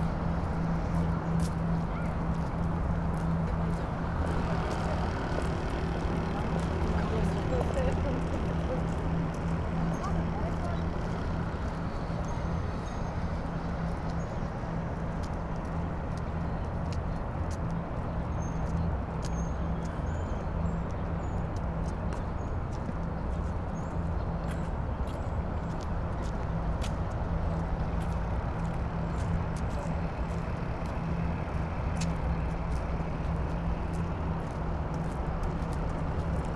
Osaka, Kita, Sugaharacho, canal bank - resting man with a radio
recording on a water canal bank, pulsating, dense, continuous city ambience, sounds like a huge fan. passing boat, water splashing, construction workers, walking couples - suits, big sunglasses, hushed conversations words. suspicious glimpses at the recorder. and the gaijin phonographer. then a 180-degree turn. a few older man warming their bones in first blasts of spring sun. one of them listening to small, ultra lo-fi, portable radio. sudden bike roar from the bridge above strangles the relaxing, lethargic space and wraps and puts the recording to an end.